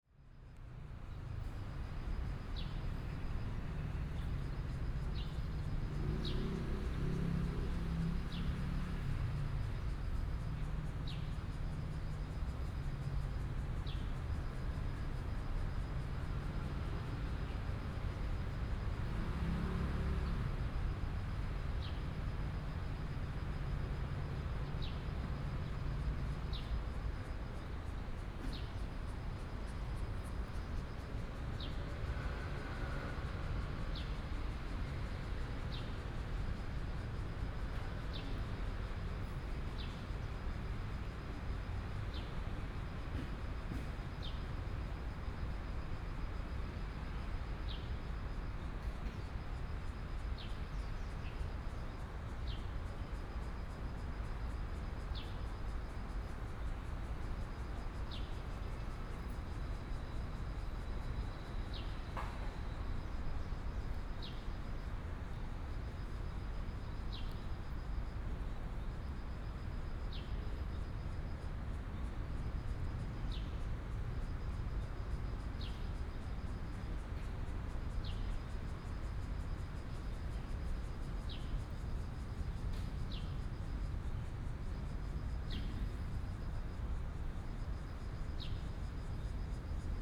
Surrounded by high-rise park, Bird calls
Taipei City, Taiwan